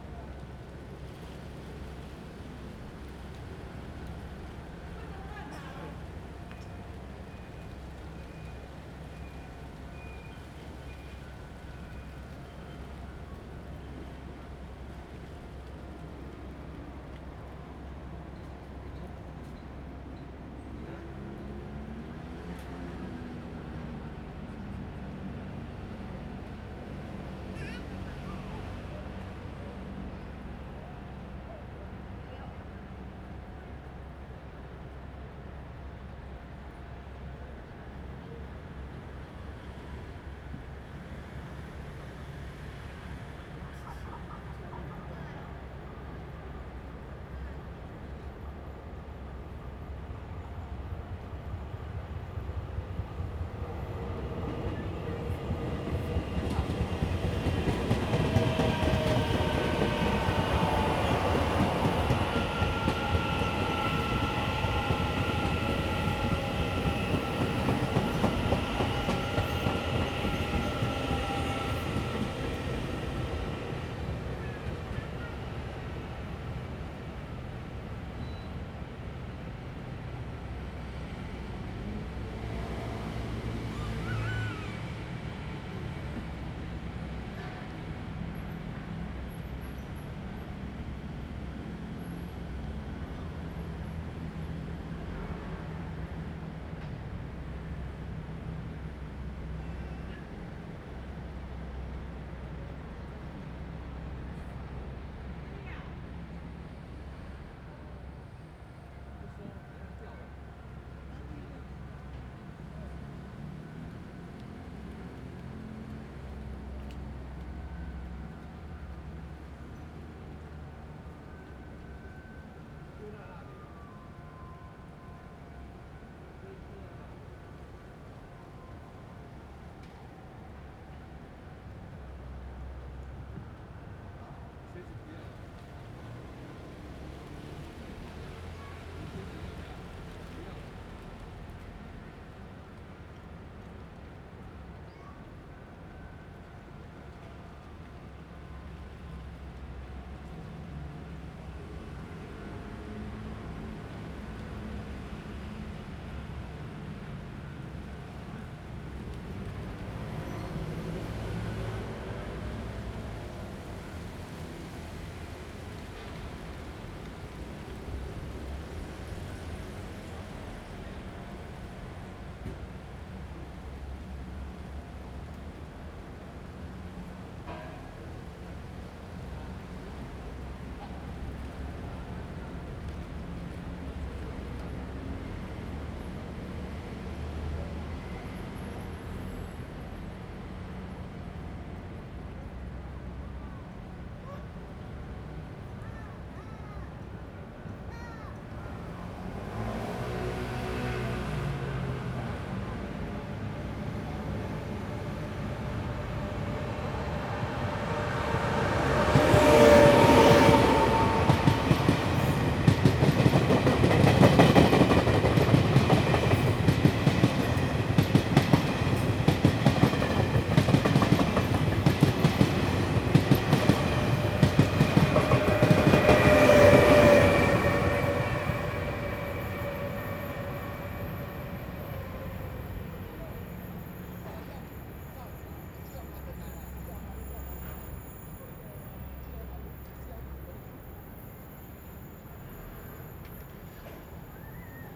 Xingzhu St., East Dist., Hsinchu City - Train traveling through
Traffic sound, Train traveling through, Construction sound
Zoom H2n MS+XY